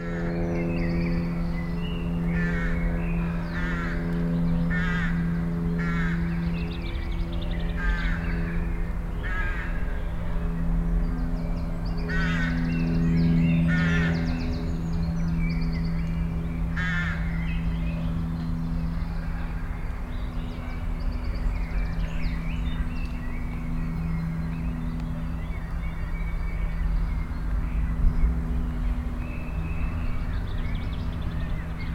Kronshagen, Deutschland - Sunday late afternoon
Spring, Sunday, late afternoon in an urban residential district. A plane, birds, cars, a motorcycle, a few people in a distance. Binaural recording, Soundman OKM II Klassik microphone with A3-XLR adapter and windshield, Zoom F4 recorder.
Kronshagen, Germany, 7 May 2017